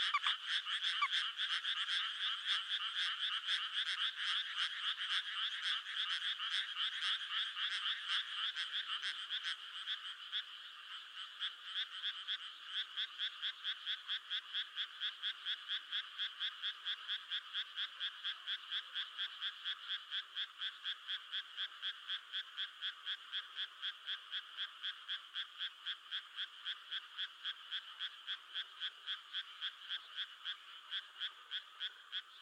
Ōmachi, Kishima District, Saga, Japan - Frogs
Frogs in the rice fields of Saga.
佐賀県, 日本, June 2018